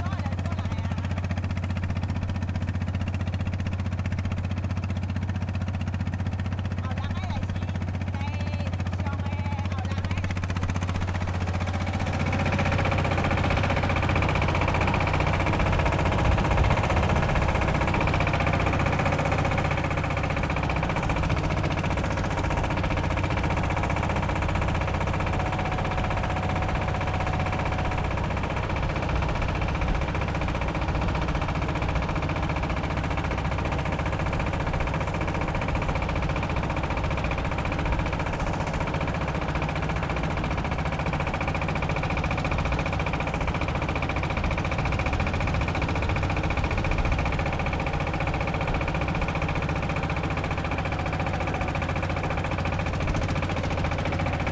Changhua, Taiwan - the truck traveling at sea
Small truck traveling at sea, The sound of the wind, Oysters mining truck, Very strong winds weather
Zoom H6 MS
Fangyuan Township, 永興海埔地海堤, 9 March 2014, ~10:00